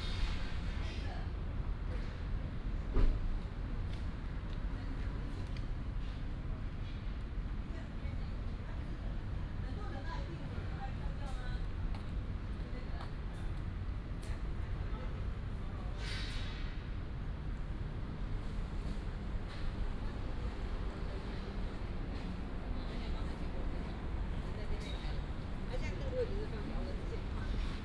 四知四村, Hsinchu City - Construction site
Site construction sound, traffic sound, Binaural recordings, Sony PCM D100+ Soundman OKM II